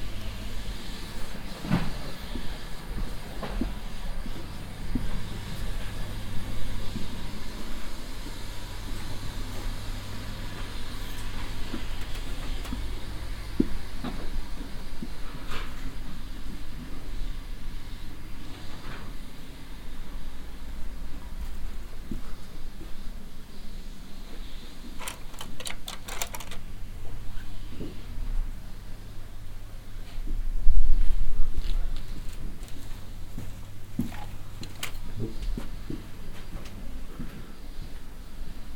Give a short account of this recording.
inside a store for professional art material - walk thru the shelves, soundmap nrw - social ambiences and topographic field recordings